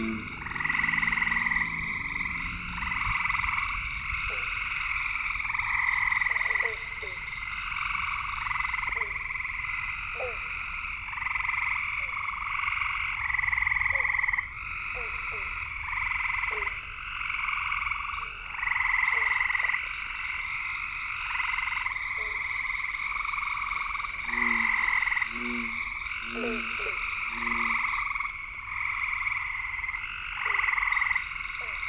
Downe, NJ, USA - bear swamp frogs
several frog species call from a roadside bog